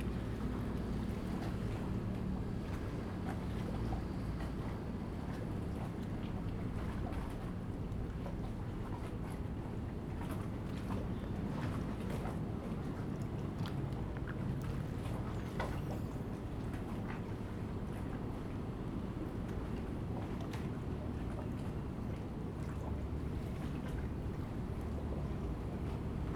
新港漁港, Chenggong Township - The quayside
The quayside, Very hot weather
Zoom H2n MS+ XY
Taitung County, Taiwan